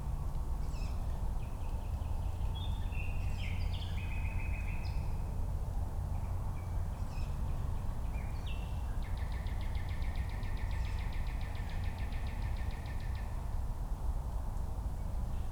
Schloßpark Buch, Berlin, Deutschland - park ambience /w Nightingale and distant traffic noise
Schloßpark Berlin Buch ambience, nightingale, young tawny owls and another nightingale in the background, as well as traffic noise from cars, suburb and freight trains.
(Sony PCM D50, DPA4060)
Berlin, Germany, 6 May 2019